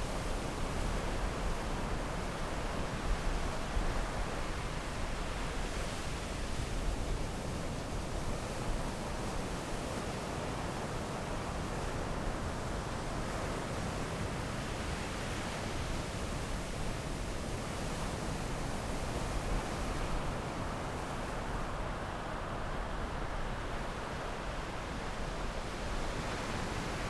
Taitung County, Taiwan - Sound of the waves
At the beach, Sound of the waves, Fighter flight traveling through, Zoom H6 M/S + Rode NT4
15 January 2014